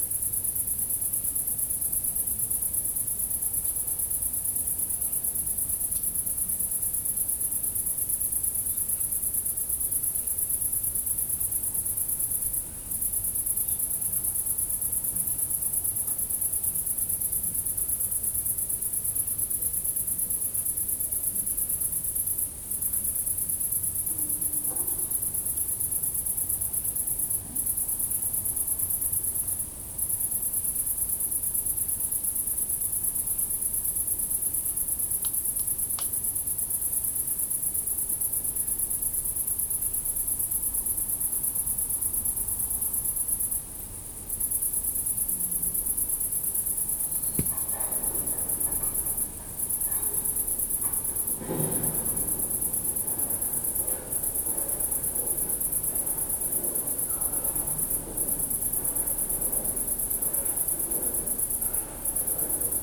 brandenburg/havel, kirchmöser, nordring: garden - the city, the country & me: garden by night
crickets, overhead crane of a track construction company and freight trains in the distance
the city, the country & me: august 23, 2016
23 August, 23:30